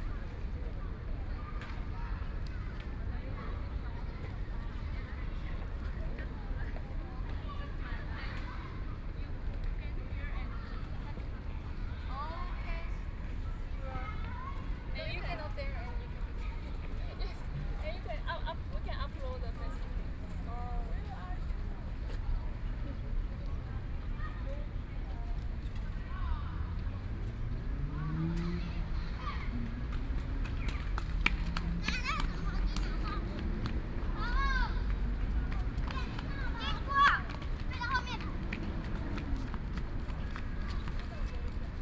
Chiang Kai-shek Memorial Hall, Taipei - Tourists
Square entrance, Sony PCM D50+ Soundman OKM II